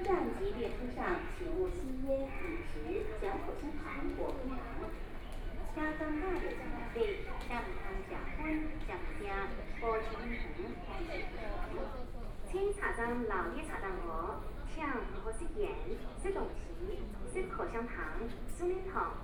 Guanqian Rd., Taipei City - soundwalk
from National Taiwan Museum to Taipei Station, Binaural recordings, Zoom H6+ Soundman OKM II